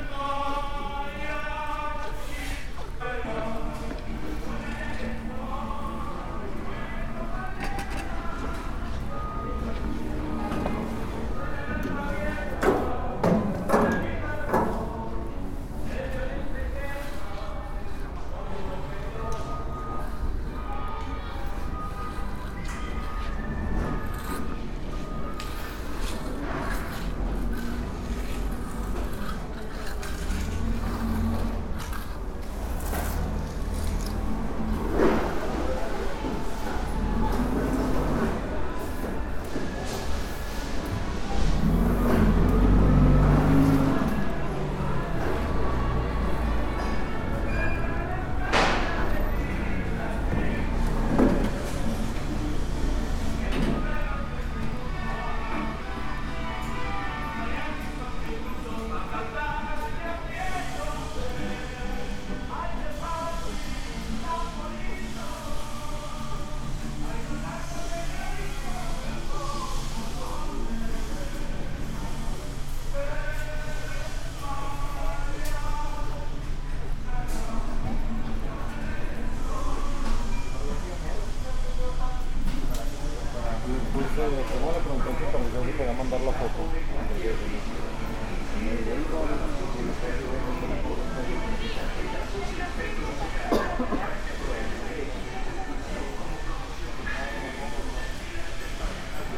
C. Felipe B. Martínez Chapa, Hispano, León, Gto., Mexico - Caminando dentro de The Home Depot, León Guanajuato, por todas las secciones de la tienda.
Walking inside The Home Depot, Leon Guanajuato, by all the sections of the store.
I made this recording on September 13th, 2021, at 1:33 p.m.
I used a Tascam DR-05X with its built-in microphones and a Tascam WS-11 windshield.
Original Recording:
Type: Stereo
Caminando dentro de The Home Depot, León Guanajuato, por todas las secciones de la tienda.
Esta grabación la hice el 13 de septiembre de 2021 a las 13:33 horas.
Usé un Tascam DR-05X con sus micrófonos incorporados y un parabrisas Tascam WS-11.
Guanajuato, México, 13 September 2021, ~14:00